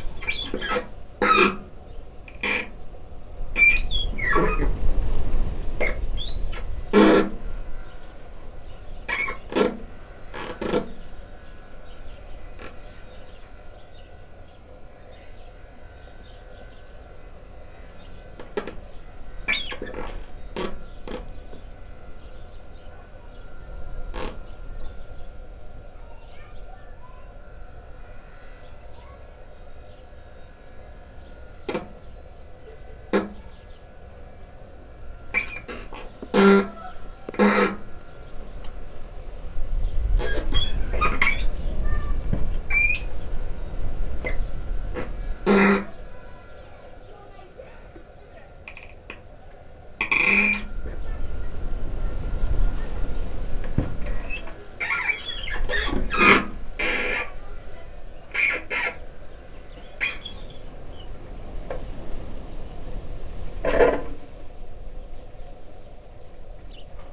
Nowieczek, Nowieczek, Polska - gutter
a branch scratching a metal sheet gutter on the roof. gentle hum of a water pump in the background. power saw operating. kids playing in a homestead across the street. (roland r-07)